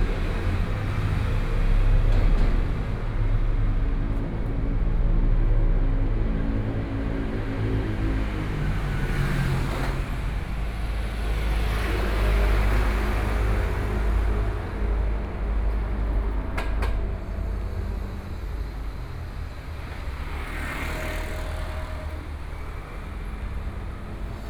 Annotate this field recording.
Walking in the underpass, Traffic Noise, Zoom H4n+ Soundman OKM II